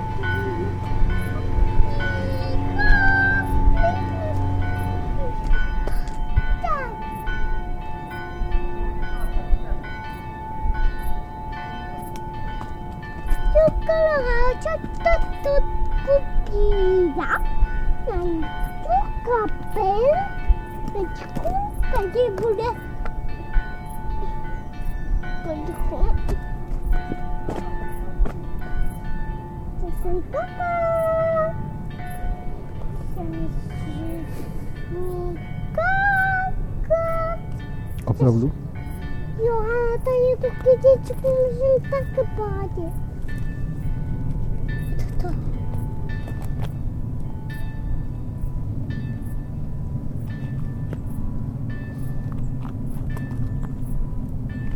bells from Saint Vitus and Strahov Monestary
20 September 2011, 18:00